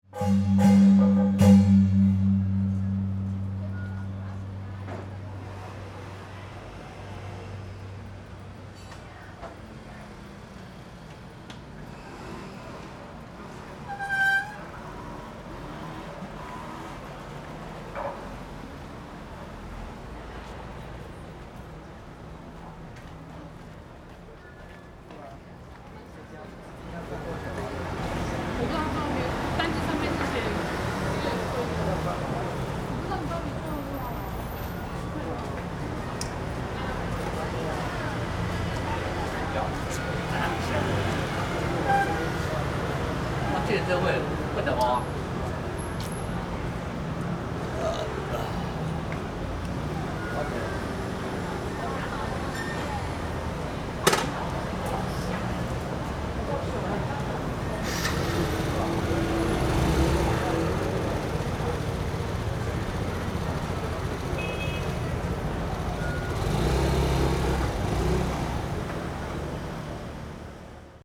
Ln., Tonghua St., 大安區 - Around the corner
in a small alley, Just after the temple festival, traffic sound
Zoom H4n + Rode NT4
Taipei City, Taiwan, 13 February